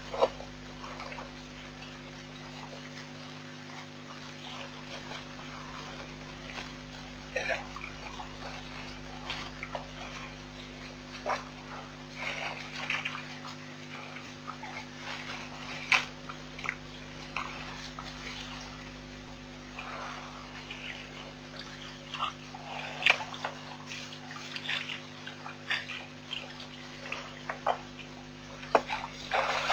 Nkorho Bush Lodge, Sabi Sand Game Reserve, Jackals sounds at night
Jackal sounds at Nkorho Bush Lodge at night.
18 May 2007, ~18:00